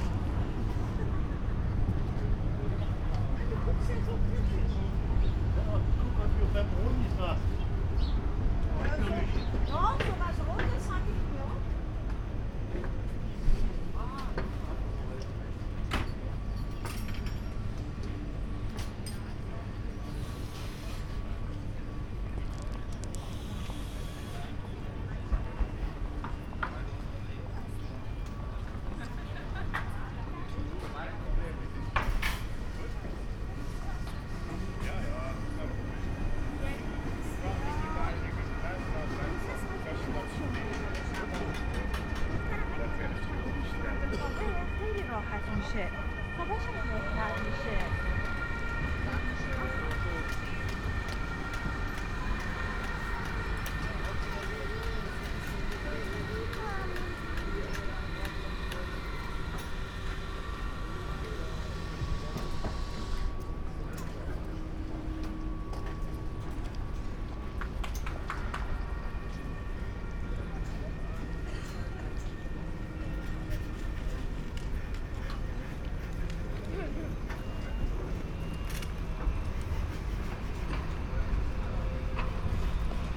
Marktplatz, Halle (Saale), Deutschland - market walk
walking over a small market at Marktplatz Halle, Monday morning
(Sony PCM D50, Primo EM172)